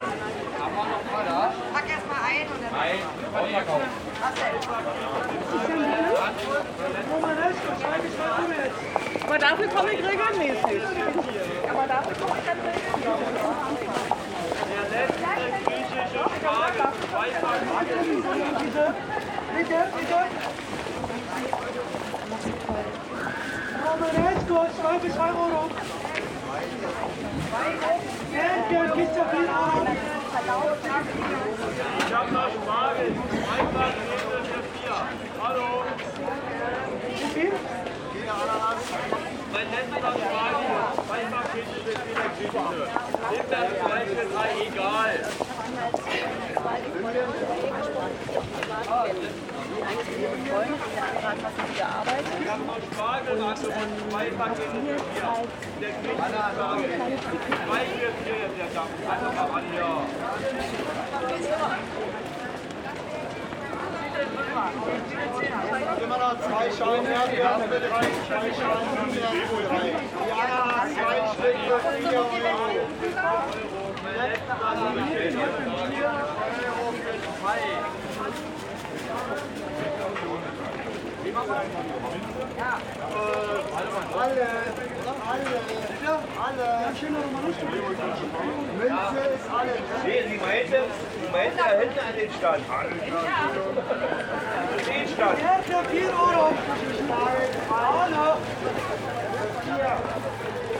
Standort: Kollwitzplatz, Kollwitzstraße Ecke Wörther Straße. Blick Richtung Nordwest und Südost.
Kurzbeschreibung: Wochenmarkt mit Marktschreiern und Publikum.
Field Recording für die Publikation von Gerhard Paul, Ralph Schock (Hg.) (2013): Sound des Jahrhunderts. Geräusche, Töne, Stimmen - 1889 bis heute (Buch, DVD). Bonn: Bundeszentrale für politische Bildung. ISBN: 978-3-8389-7096-7